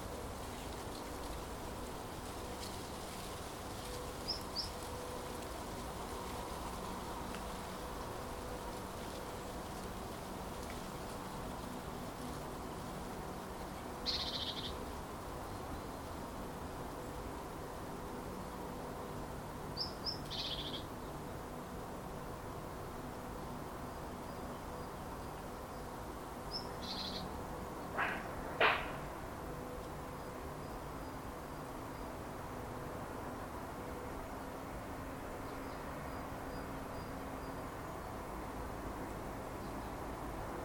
Ветер. Пение птиц. Звуки производства и частного сектора